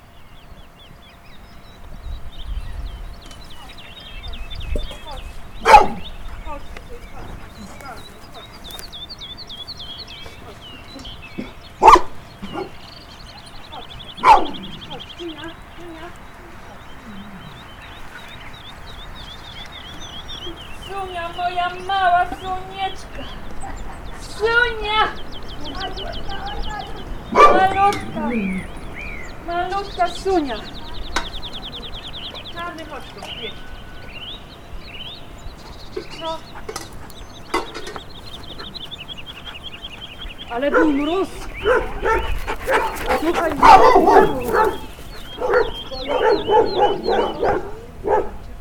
Osieck, Poland, Polish countryside
Phonography composition which describes Polish (Mazovia Province) rural soundscape.